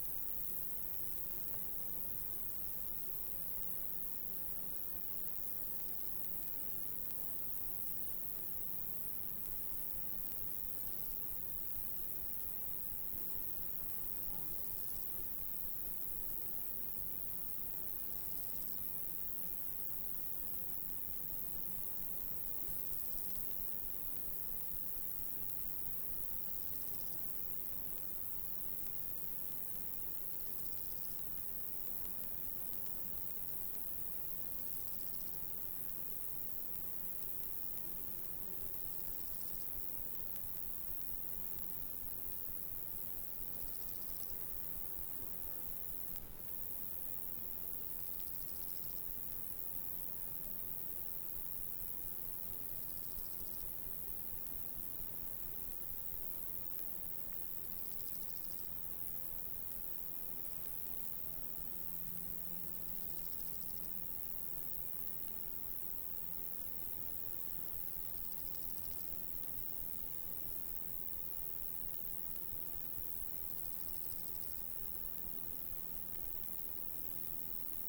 {
  "title": "Frauenberger und Duschlberger Wald, Deutschland - Bavarian Forest in the summer",
  "date": "2016-08-16 13:08:00",
  "description": "cicadas and other insects tschirping on two sides of a small forest street in the bavarian forest near the border..\nRecorder: Zoom H5, no treatments or effects",
  "latitude": "48.82",
  "longitude": "13.79",
  "altitude": "905",
  "timezone": "GMT+1"
}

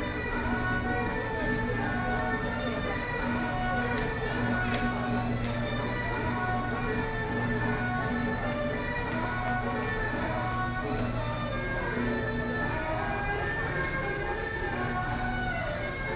{
  "title": "Buddhist Temple, Luzhou - Traditional temple Festival",
  "date": "2013-10-22 17:46:00",
  "description": "The crowd, Standing in the square in front of the temple, Traditional temple Festival, Binaural recordings, Sony PCM D50 + Soundman OKM II",
  "latitude": "25.08",
  "longitude": "121.47",
  "altitude": "8",
  "timezone": "Asia/Taipei"
}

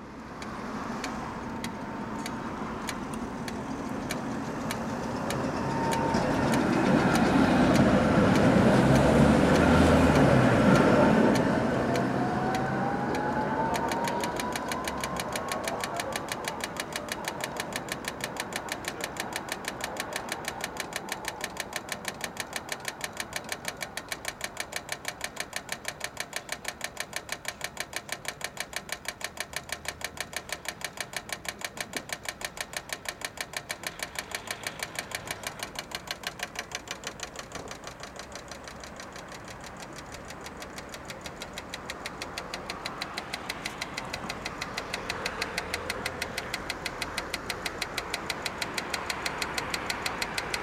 {
  "title": "Prague, traffic light by sound",
  "date": "2010-11-10 13:47:00",
  "description": "What if a blind person wanted to cross the tram tracks behind Prague Castle? Fortunately, a sound device is giving a signal whether the light is green or red. Unfortunately, they are just as arbitrary as the colours, so which is which?",
  "latitude": "50.09",
  "longitude": "14.40",
  "altitude": "259",
  "timezone": "Europe/Prague"
}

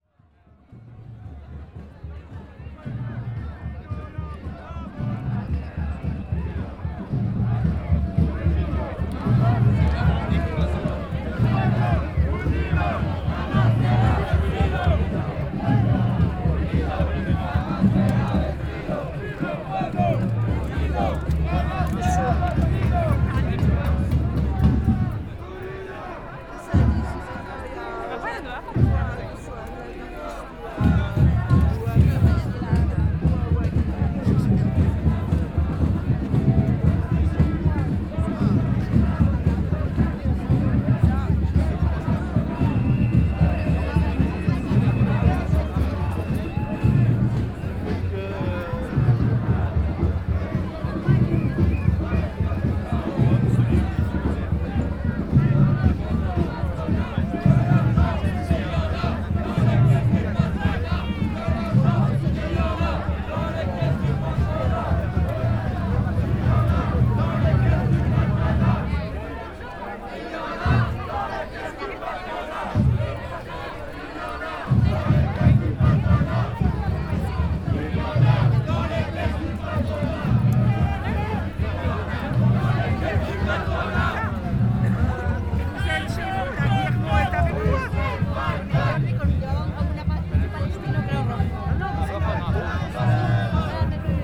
{
  "date": "2011-10-15 14:17:00",
  "description": "Occupy Brussels - Boulevard Simon Bolivar, Spanish and French protests",
  "latitude": "50.86",
  "longitude": "4.36",
  "altitude": "21",
  "timezone": "Europe/Brussels"
}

